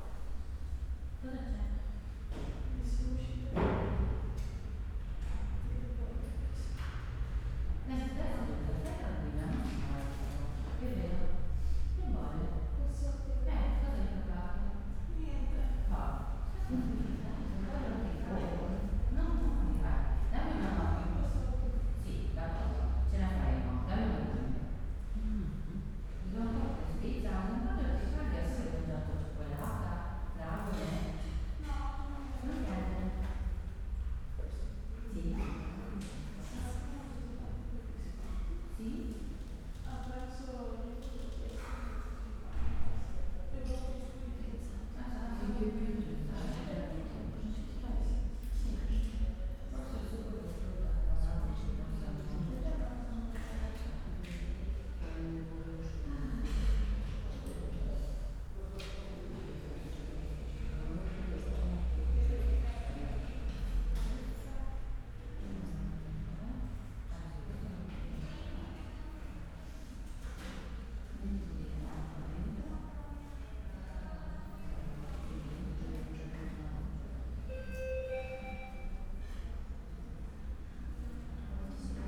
Ospedale Maggiore, Piazza dell'Ospitale, Trieste, Italy - waiting room
hospital, waiting room
(SD702, DPA4060)